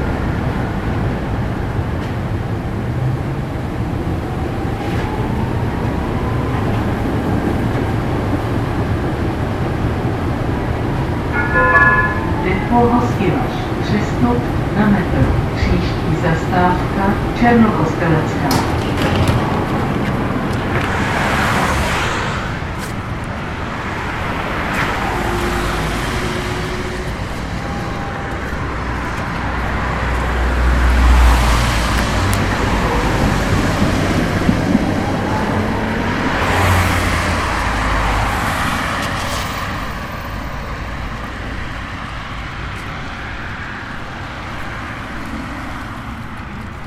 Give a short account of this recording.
tram ride from end stop of nr.7 till end stop of metro Hostivar. January 6 2009